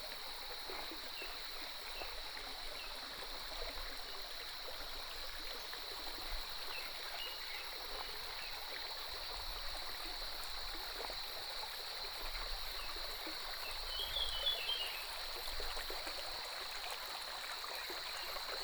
中路坑溪, Puli Township - Walking along the stream
Walking along the stream, The sound of water streams, Bird calls, Crowing sounds, Cicadas cry